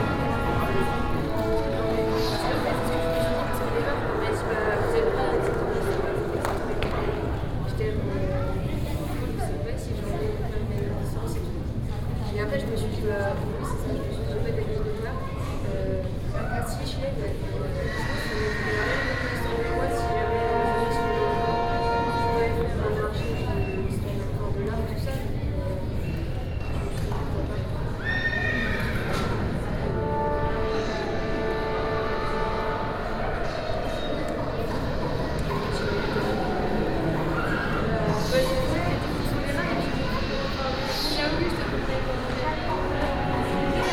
Château dAngers, Angers, France - (584 BI) Apocalypse Tapestry
Binaural recording of a walk-around Apocalypse Tapestry exhibition at Château d'Angers.
Recorded with Soundman OKM on Sony PCM D100
France métropolitaine, France, 17 August 2019